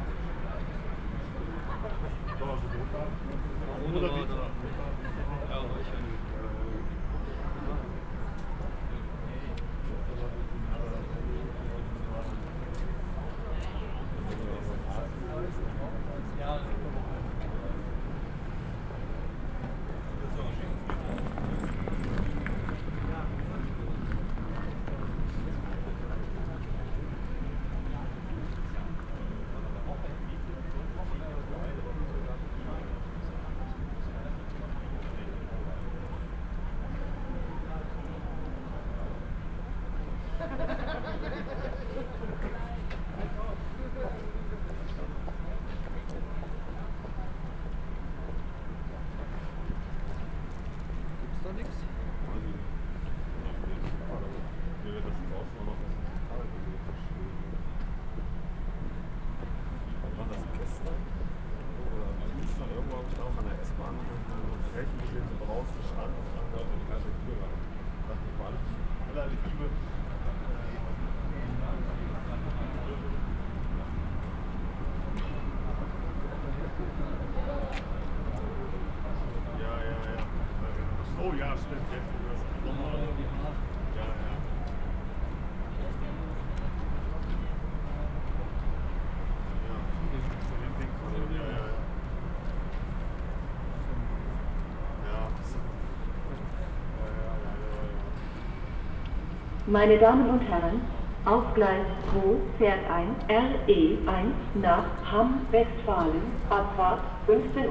people waiting and talking at the rail track, train passing by, a female anouncement, a train drives in and stops at the station
soundmap d - social ambiences and topographic field recordings